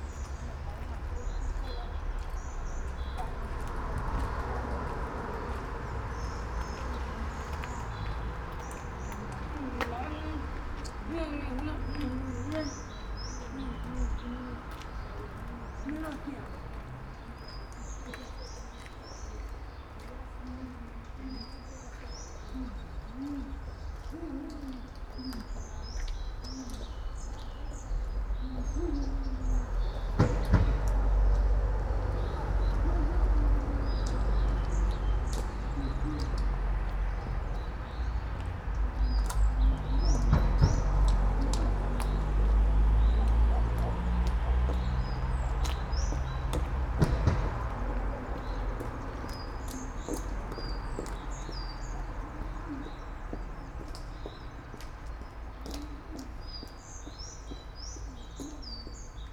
all the mornings of the ... - may 1 2013 wed